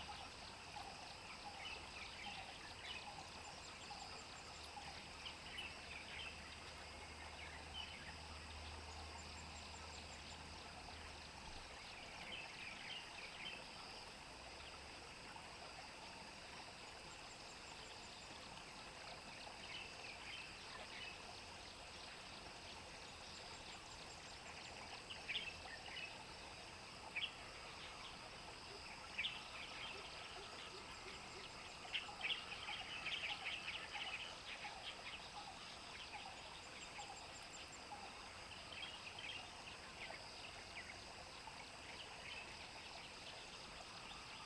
{"title": "桃米巷, 埔里鎮 Puli Township - Bird calls", "date": "2015-04-30 06:32:00", "description": "Bird calls, Frogs chirping\nZoom H2n MS+XY", "latitude": "23.94", "longitude": "120.94", "altitude": "491", "timezone": "Asia/Taipei"}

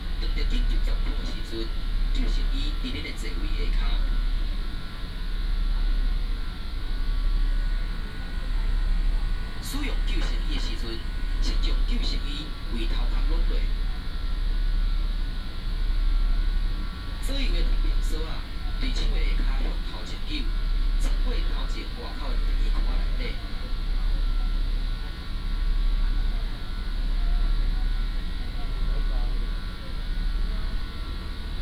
{"title": "東港漁港, Donggang Township - In the cabin", "date": "2014-11-01 12:30:00", "description": "In the cabin, Information broadcast by boat", "latitude": "22.47", "longitude": "120.44", "altitude": "3", "timezone": "Asia/Taipei"}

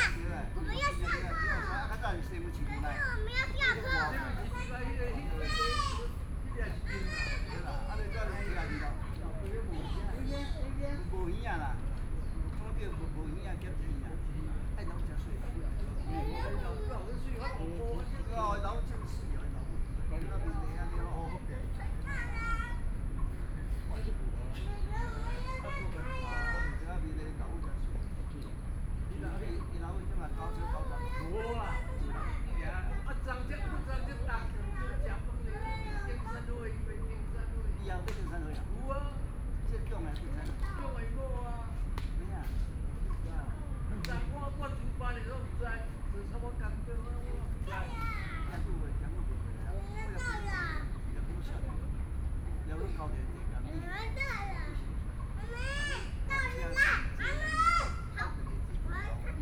{"title": "Taipei Botanical Garden, Taipei City - in the Park", "date": "2013-09-13 17:03:00", "description": "in the Park, Children and the elderly, birds song, Sony PCM D50 + Soundman OKM II", "latitude": "25.03", "longitude": "121.51", "altitude": "13", "timezone": "Asia/Taipei"}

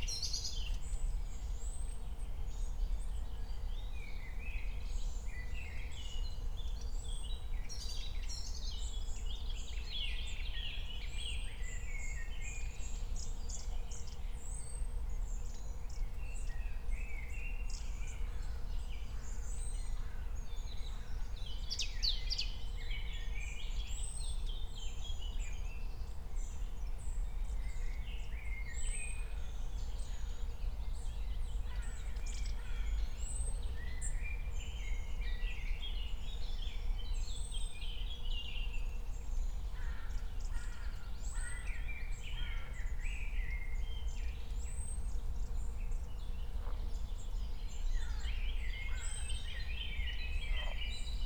{"title": "Königsheide, Berlin - forest ambience at the pond", "date": "2020-05-23 10:00:00", "description": "10:00 voices, crows, fluttering wings, other birds", "latitude": "52.45", "longitude": "13.49", "altitude": "38", "timezone": "Europe/Berlin"}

